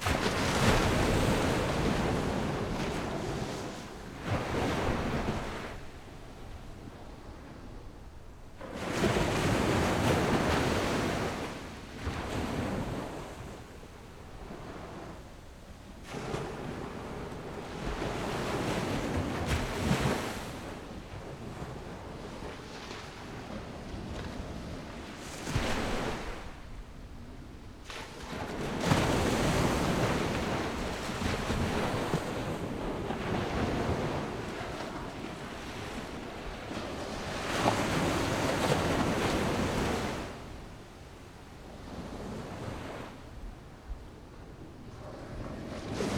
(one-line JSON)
{"title": "嵵裡沙灘, Magong City - At the beach", "date": "2014-10-23 13:29:00", "description": "At the beach, Windy, Sound of the waves\nZoom H6+Rode NT4", "latitude": "23.53", "longitude": "119.57", "altitude": "6", "timezone": "Asia/Taipei"}